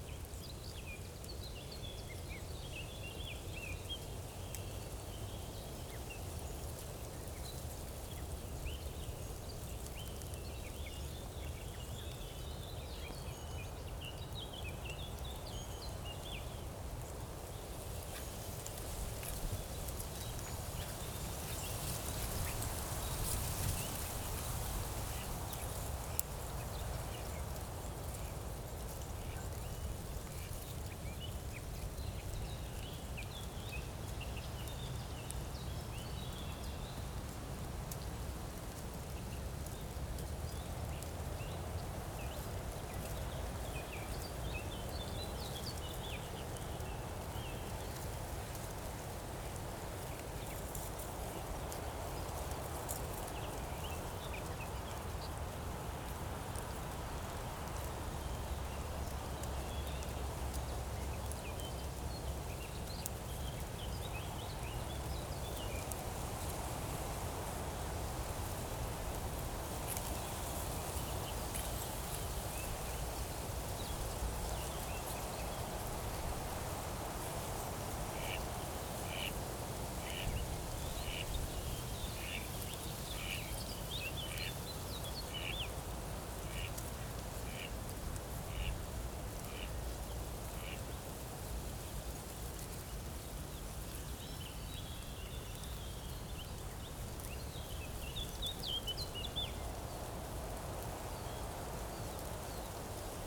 Lietzengraben, Berlin Buch, Deutschland - quiet field ambience in spring
having a rest at Lietzengraben, a half natural half man made ditch, little river, creek or brook, which is of high importance to the ecological condition in this area. Quiet field ambience with gentle wind in trees and dry weed.
(Tascam DR-100MKIII, DPA4060)
17 April